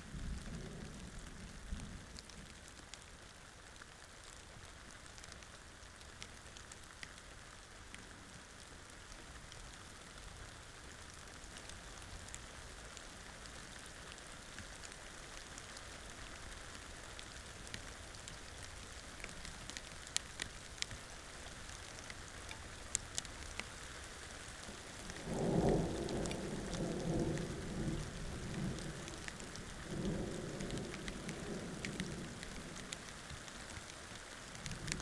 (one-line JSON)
{"title": "Warmbad, South Africa - A Storm while camping", "date": "2016-10-31 21:30:00", "description": "Nokeng Eco Lodge. Equipment set up to record the Dawn Chorus the following day. EM172's on a Jecklin disc to SD702", "latitude": "-25.29", "longitude": "28.46", "altitude": "1059", "timezone": "Africa/Johannesburg"}